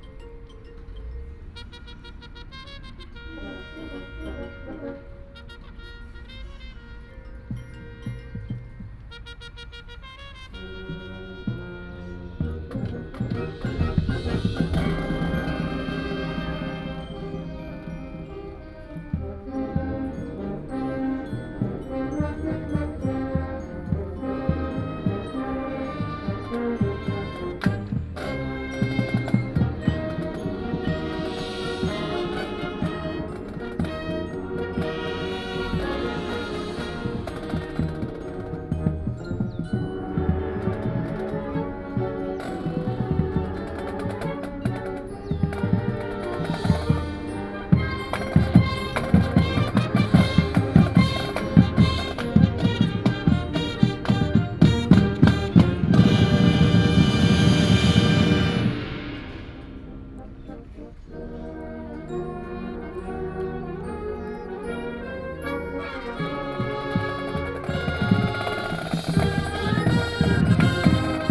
Chiang Kai-shek Memorial Hall, Taipei - High School Band
High school marching band is practicing, Sony PCM D50 + Soundman OKM II
台北市 (Taipei City), 中華民國, 25 May 2013